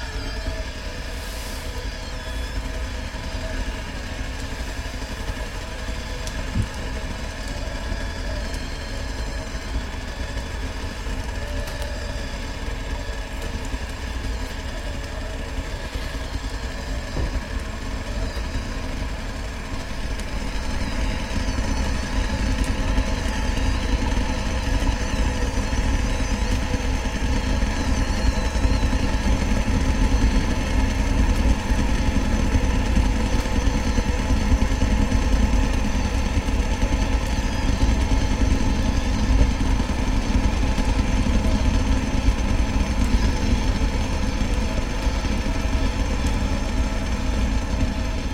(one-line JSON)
{"title": "Palackého museum, from the kitchen", "date": "2011-01-04 12:00:00", "description": "from the kitchen, teapot of the frantisek Palacky", "latitude": "50.08", "longitude": "14.42", "timezone": "Europe/Prague"}